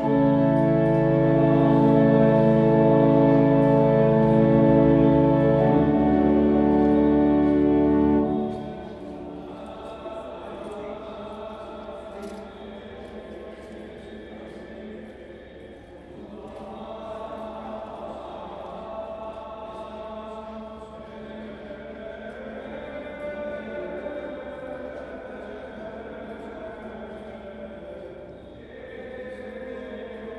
Jerusalem, Israel, Church of Holy Sepulcher - Chanting- Church of the Holy Sepulchre-1
A Zoom Recording of the 17:00 pm daily chanting, at the Church of the Holy Sepulchre, Christian Quarter of the Old City, Jerusalem